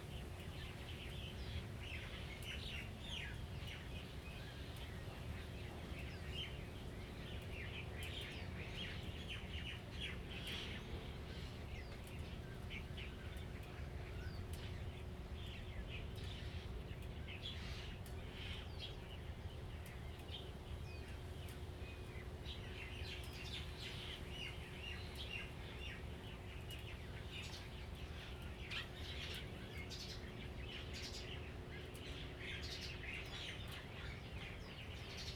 湖埔路, Lieyu Township - Birds singing
Birds singing, Traffic Sound, In the Bus station, Dogs barking
Zoom H2n MS+XY